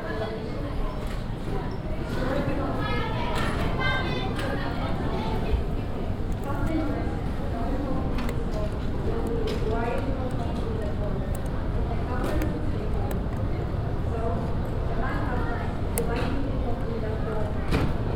tokyo, airport, luggage belt
At the arrival zone. The sound of the luggage belt and passengers waiting for their suitcases.
international city scapes - topographic field recordings and social ambiences
8 July 2011, 18:49